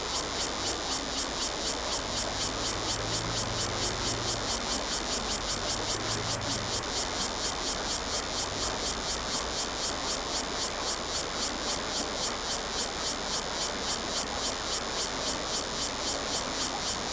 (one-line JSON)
{"title": "新福里, Guanshan Township - Cicadas and streams", "date": "2014-09-07 10:10:00", "description": "Cicadas sound, Traffic Sound, Agricultural irrigation waterway, Lawn mower\nZoom H2n MS+ XY", "latitude": "23.04", "longitude": "121.17", "altitude": "219", "timezone": "Asia/Taipei"}